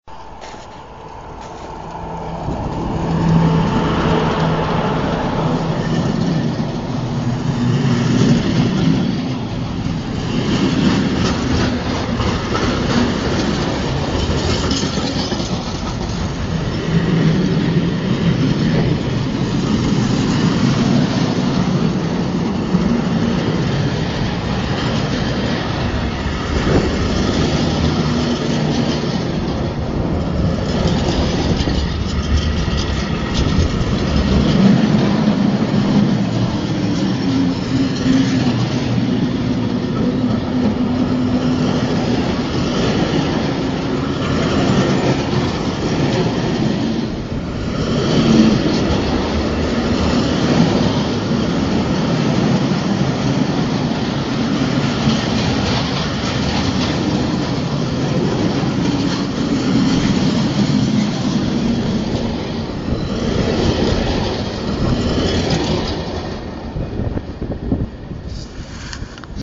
Güterzug Bahnhof Sanssouci
The sound of Germanys economic veins. Freight train... freight train.